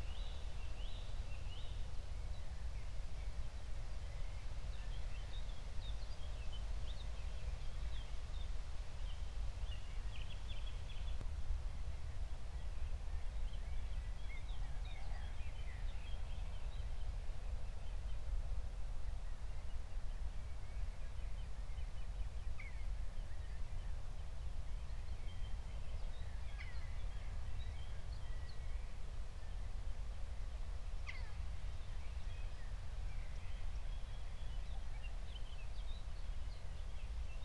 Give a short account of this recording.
20:00 Berlin, Buch, Mittelbruch / Torfstich 1